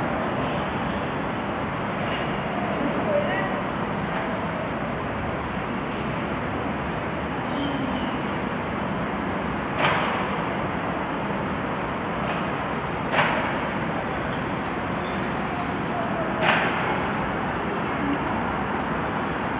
Ambient sounds from the Xingfuyicun 8th alleyway (upside the Worker Stadium north Rd) - Ambient sounds from the Xingfuyicun 8th alleyway
This site is mapped and based on the satellite image. You will hear the sounds of the residential area consist of engines ignition, urban construction and some misty ambient voices by the pedestrian.
北京市, 中国, 21 September 2021, ~18:00